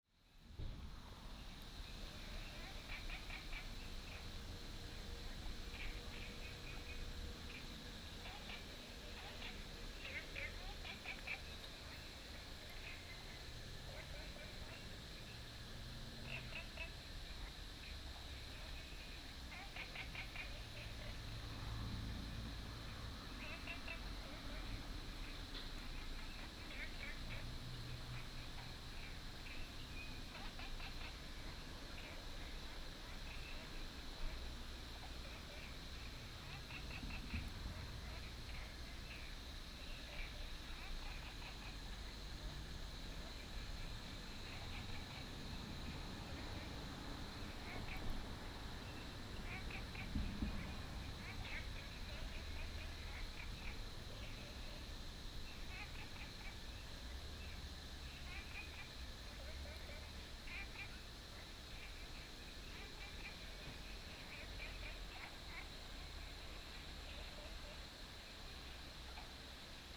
桃米溪, Puli Township - Frog sounds
Frog sounds, Next to the stream